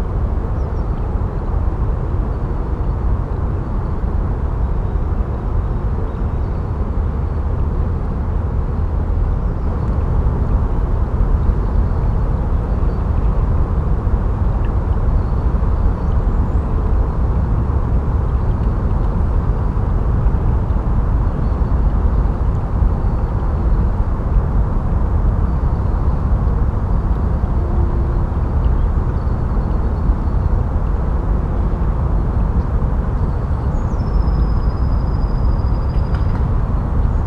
langenfeld, further moor, kleine gewässer
das rauschen der naheliegenden autobahn
feines plätschern kleiner gewässer im moor
am frühen abend
soundmap nrw/ sound in public spaces - social ambiences - in & outdoor nearfield recordings